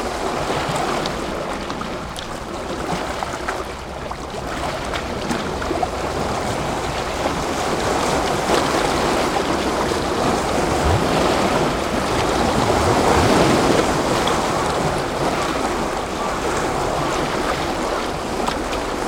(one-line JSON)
{"title": "Chomeijicho, Omihachiman, Shiga Prefecture, Japan - Biwako Waves", "date": "2015-10-12 13:30:00", "description": "Waves crashing against a rocky shoreline at Lake Biwa north of Chomeiji.", "latitude": "35.16", "longitude": "136.06", "altitude": "81", "timezone": "Asia/Tokyo"}